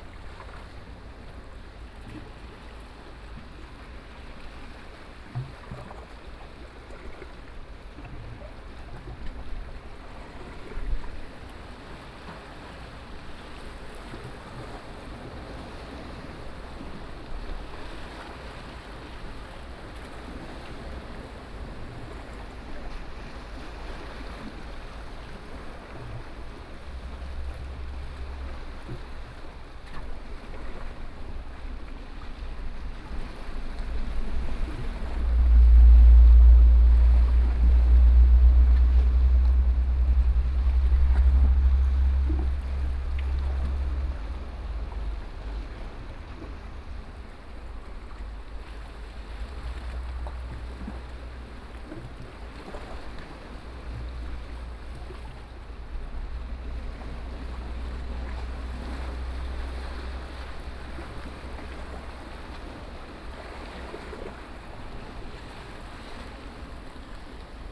頭城鎮外澳里, Yilan County - the waves
Sound of the waves, Traffic Sound, Standing inside the Rocks, Hot weather
29 July 2014, 15:20